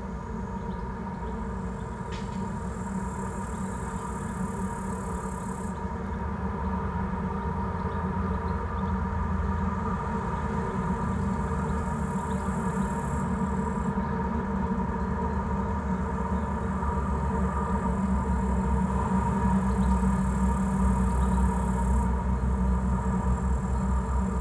Jasonys, Lithuania, two tubes
small omni mics in two metallic tubes - remains from some kind of soviet kindergarten "toys"